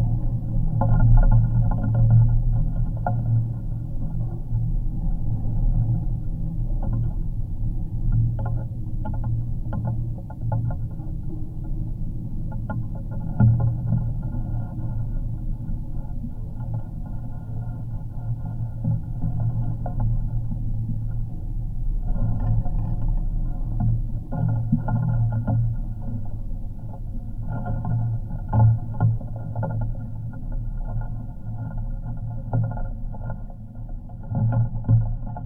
Šiaudiniai, Lithuania, watertower
another watertower in my collection. hopefully this will remain for longer times than metallic ones. this is bricks built, has some antennas on the top. the sounds captured are from metallic parts of the tower: ladder and pipes. geophone recording.